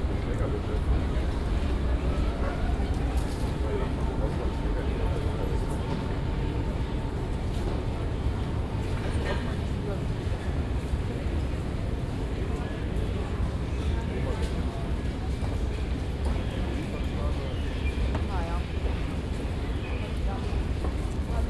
Alexanderplatz - Escalator
Escalator at Berlin Alexanderplatz. Aporee Workshop CTM.